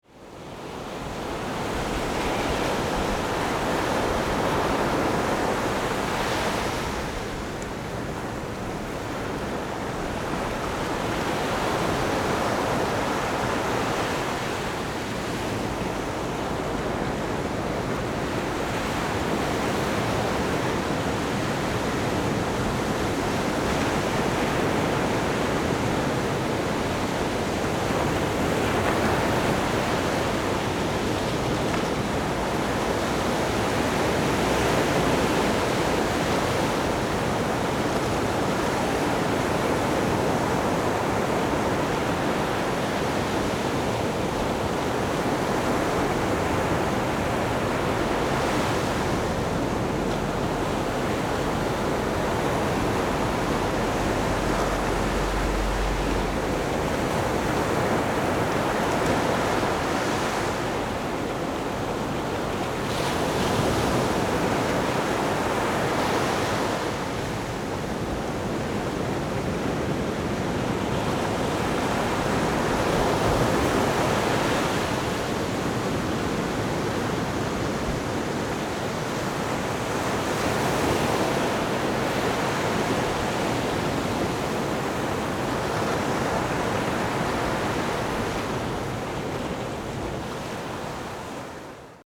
Big waves, sound of the waves
Zoom H4n+Rode NT4(soundmap 20120711-11 )
New Taipei City, Taiwan, 11 July 2012, 06:03